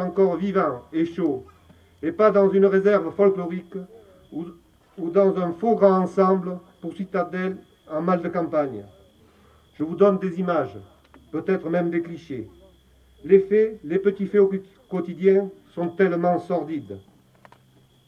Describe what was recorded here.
Lussas, Etats Généraux du documentaire 1999, Mayors opening speech